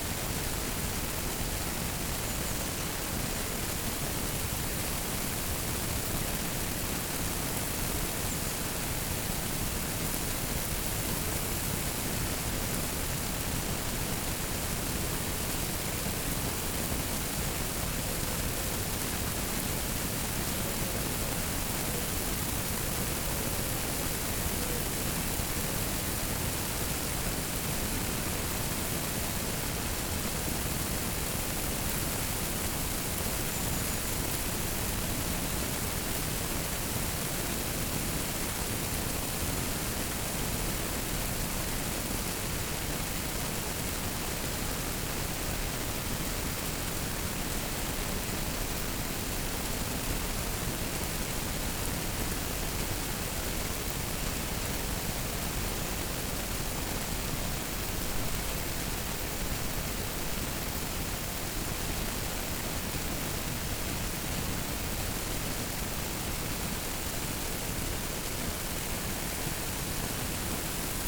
water spraying out of a nozzle of a hose sprinkler. interesting combination of different water sound. water dripping from the hose, heavy drops hitting the ground to the left and noise of the spray on the right. (roland r-07)